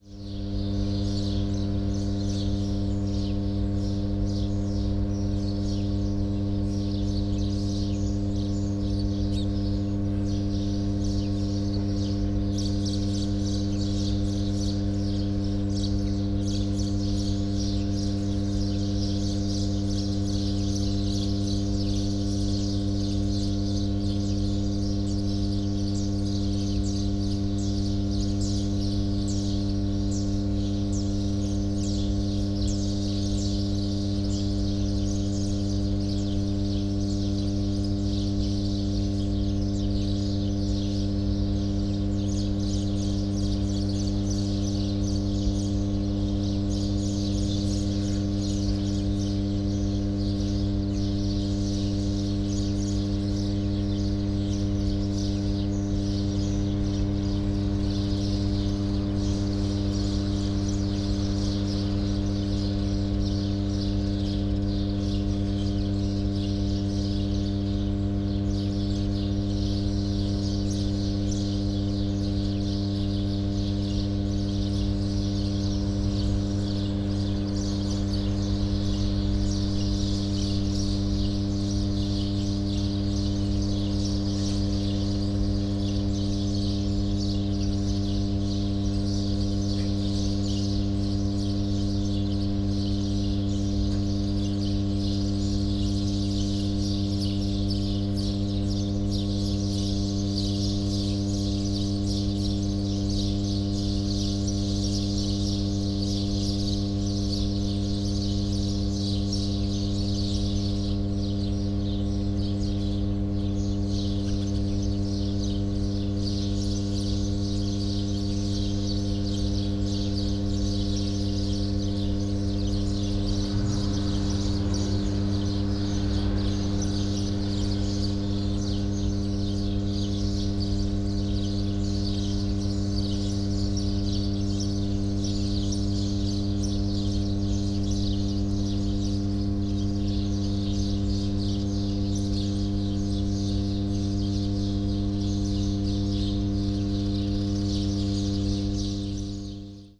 {"title": "Maghtab, Naxxar, Malta - Enemalta power station hum", "date": "2017-04-07 13:40:00", "description": "hum of the huge transformers at Enemalta power station, providing the country with access to electricity generated through sources located in Sicily and other regions in mainland Europe.\n(SD702 DPA4060)", "latitude": "35.94", "longitude": "14.44", "altitude": "25", "timezone": "Europe/Malta"}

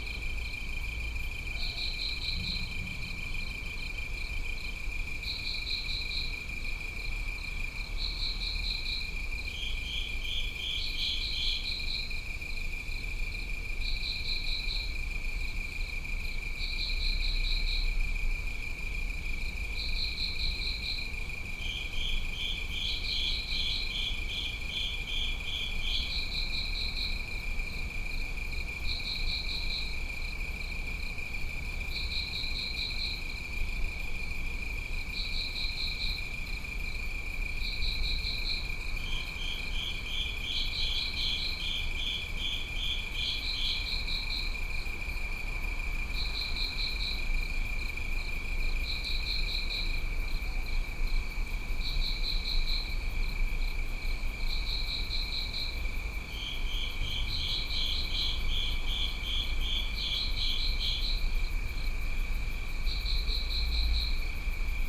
{"title": "귀뚜라미 X 배수관 crickets within a covered drain", "date": "2021-10-04 23:45:00", "description": "귀뚜라미 X 배수관_crickets within a covered drain", "latitude": "37.85", "longitude": "127.75", "altitude": "117", "timezone": "Asia/Seoul"}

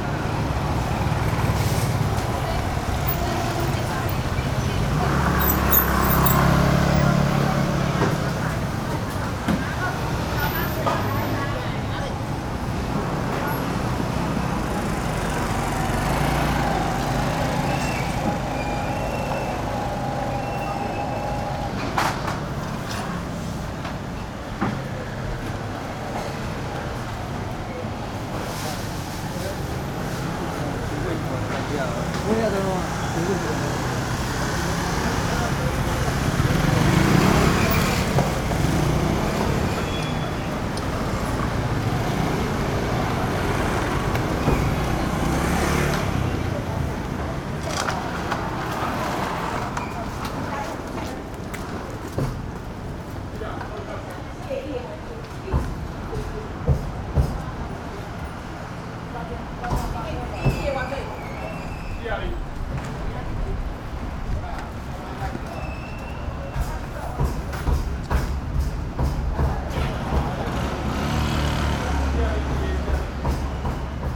{"title": "Ln., Zhongshan Rd., Tamsui Dist., New Taipei City - Traditional Market", "date": "2012-04-04 06:30:00", "description": "Small alley, Traditional Market, Traffic Sound\nSony PCM D50", "latitude": "25.17", "longitude": "121.44", "altitude": "18", "timezone": "Asia/Taipei"}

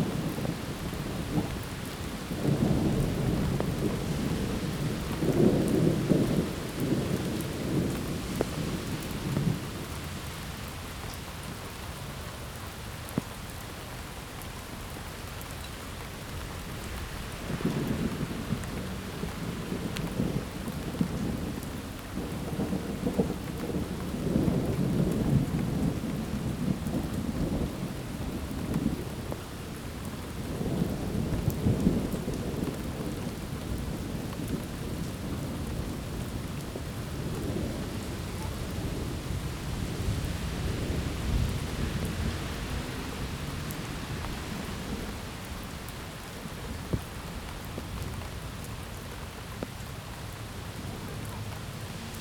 {"title": "E College Ave, Appleton, WI, USA - Gentle thunderstorm in Appleton WI", "date": "2022-05-13 19:00:00", "description": "Zoom H2, back steps away from the busy street, a lovely gentle thunderstorm rolls in with a light rain.", "latitude": "44.26", "longitude": "-88.39", "altitude": "237", "timezone": "America/Chicago"}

